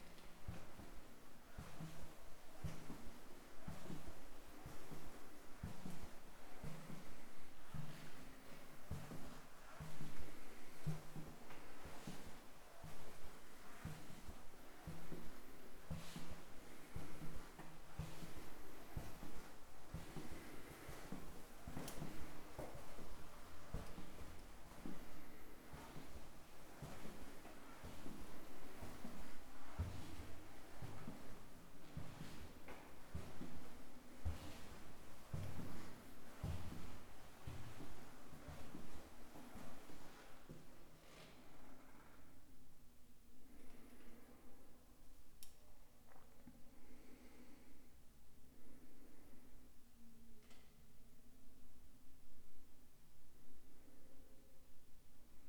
de Septiembre, Centro, León, Gto., Mexico - Caminando por las criptas del templo expiatorio.
Walking through the crypts of the expiatorio temple.
Going down from the stairs at the entrance of the crypts and walking through its corridors trying to avoid the few people that were there that day.
*I think some electrical installations caused some interference.
I made this recording on March 29th, 2022, at 5:46 p.m.
I used a Tascam DR-05X with its built-in microphones.
Original Recording:
Type: Stereo
Bajando desde las escaleras de la entrada de las criptas y caminando por sus pasillos tratando de evitar la poca gente que había ese día.
*Creo que algunas instalaciones eléctricas causaron algunas interferencias.
Esta grabación la hice el 29 de marzo de 2022 a las 17:46 horas.
Usé un Tascam DR-05X con sus micrófonos incorporados.
Guanajuato, México, 29 March